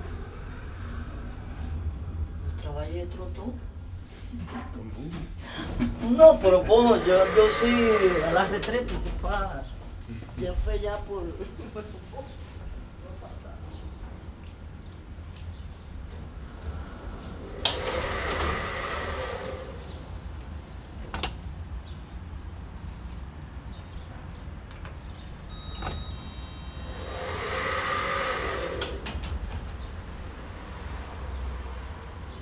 CHUV, Lausanne, Suisse - MetroM2 inside from CHUV to Flon
MetroM2_inside_from_CHUV_to_Flon
SCHOEPS MSTC 64 U, Sonosax préamp, Edirol R09
by Jean-Philippe Zwahlen
Vaud, Switzerland, 8 August 2017